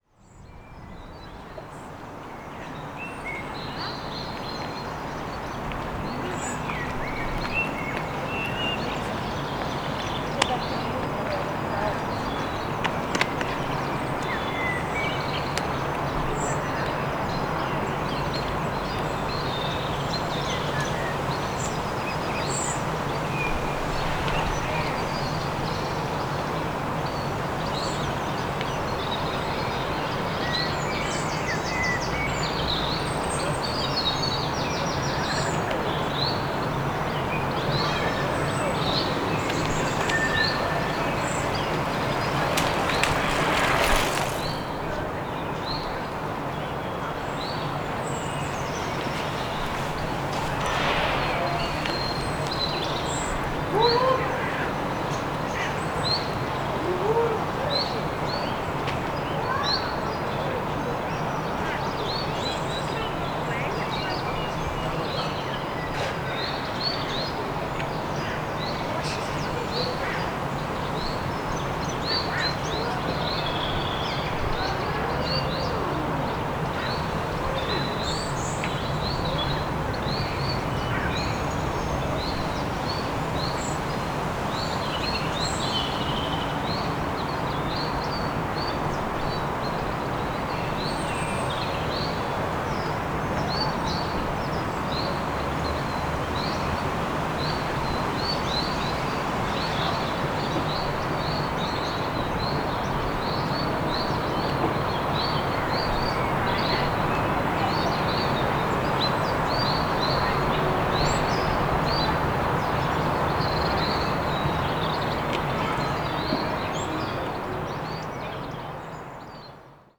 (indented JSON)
{
  "title": "Botanic Gardens",
  "date": "2020-03-27 17:00:00",
  "description": "The gentle and soothing paradise of Belfast seemed unchanged, while there would more people on a Friday afternoon. Nature always stood above any of the sounds us humans would generate in this magnificent playground.",
  "latitude": "54.58",
  "longitude": "-5.93",
  "altitude": "17",
  "timezone": "Europe/London"
}